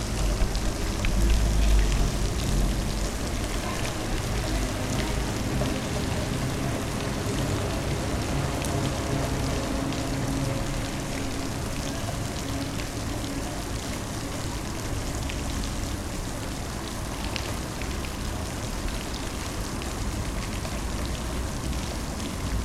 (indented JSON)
{
  "title": "Fountain, Zoetermeer",
  "date": "2010-10-13 16:48:00",
  "description": "Fountain next to an office building during sound walk",
  "latitude": "52.06",
  "longitude": "4.49",
  "timezone": "Europe/Berlin"
}